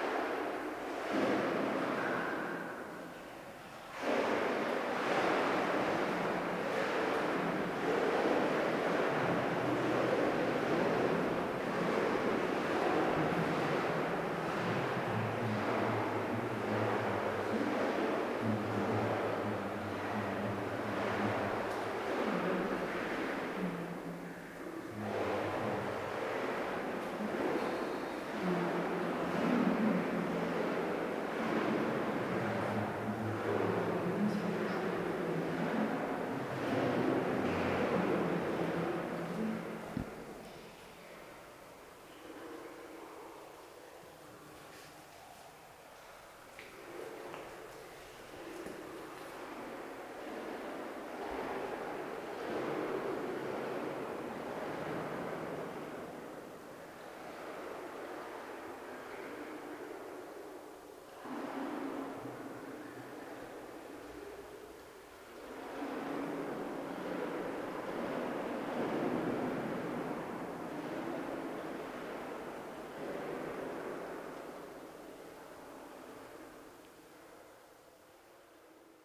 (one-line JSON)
{"title": "Audun-le-Tiche, France - Flooded tunnel", "date": "2015-10-24 07:40:00", "description": "Exploring a flooded tunnel. We are trying to reach another district, but it's impossible because it's totally flooded.", "latitude": "49.45", "longitude": "5.95", "altitude": "407", "timezone": "Europe/Paris"}